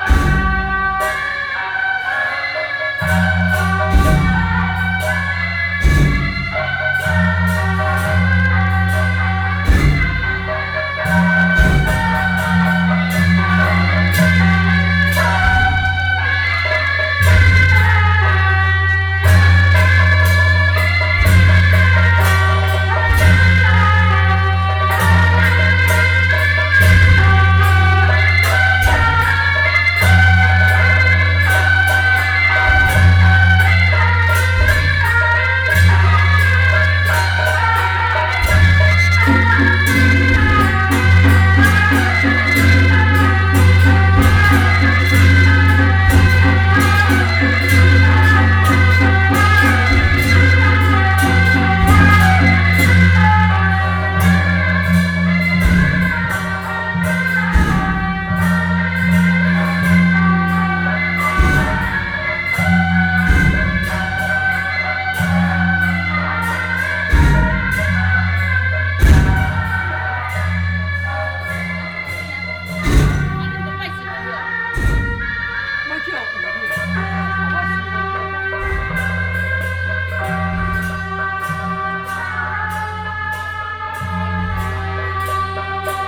淡水清水巖, New Taipei City - Walking in the area of the temple
Walking through the traditional market, Walking in the temple, traffic sound